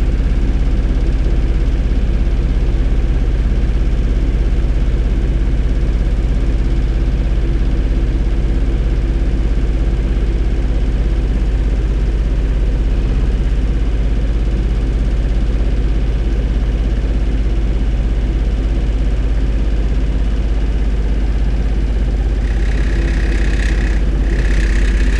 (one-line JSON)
{"title": "berlin: friedelstraße - the city, the country & me: generator", "date": "2014-07-25 01:51:00", "description": "sewer works site, generator, rattling hose clamp\nthe city, the country & me: july 25, 2014", "latitude": "52.49", "longitude": "13.43", "altitude": "46", "timezone": "Europe/Berlin"}